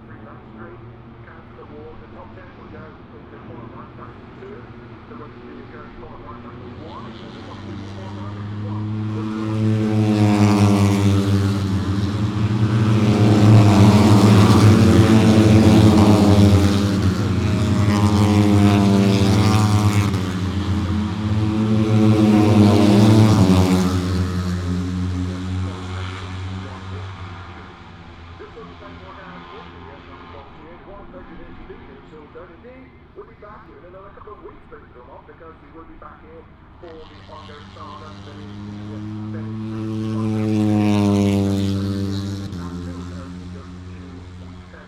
Silverstone Circuit, Towcester, UK - British Motorcycle Grand Prix 2018... moto one ...
British Motorcycle Grand Prix 2018 ... moto one ... free practice one ... maggotts ... lavalier mics clipped to sandwich box ...